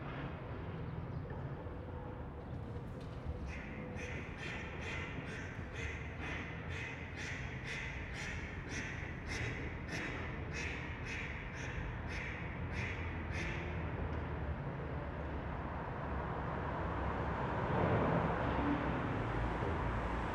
Kiehlufer, Neukölln, Berlin - under bridge
under the bridge at Kiehlufer, Berlin. sound of cars, trains, birds and the nearby scrapeyard.
(geek note: SD702, audio technica BP4025)